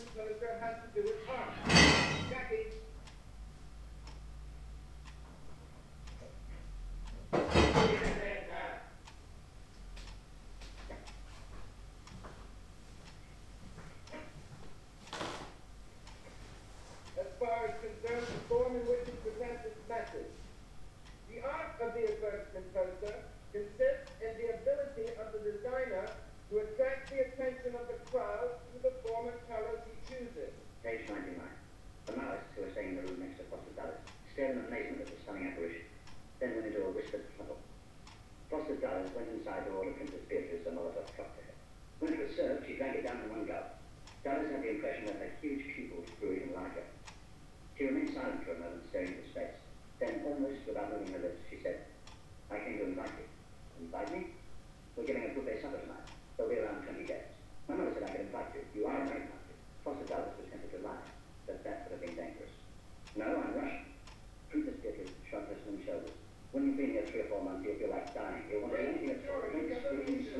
berlin, friedelstraße: das büro - the city, the country & me: looking tv
godard´s one plus one vs. das büro
the city, the country & me: september 2, 2010
Berlin, Germany, 2 September 2010, 02:27